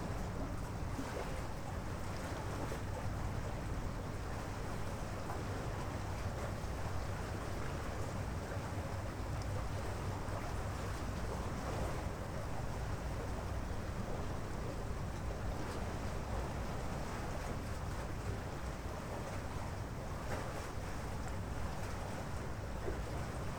Wilhelm-Spiritus-Ufer, Bonn, Deutschland - Modern shipping Rhine
This recording was made on a rowing pier in the Rhine River in Bonn, Germany. A modern cargo ship with its turbine engine passes by.
Nordrhein-Westfalen, Deutschland, 22 August 2010, ~19:00